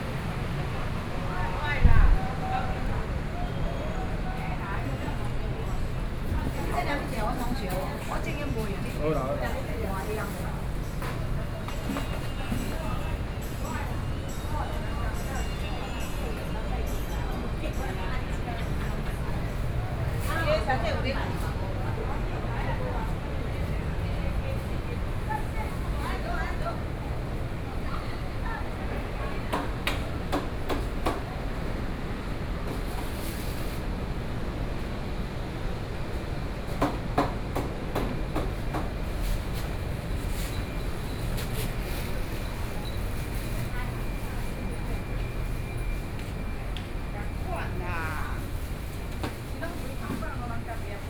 walking in the traditional market, Traffic Sound
Yilan County, Taiwan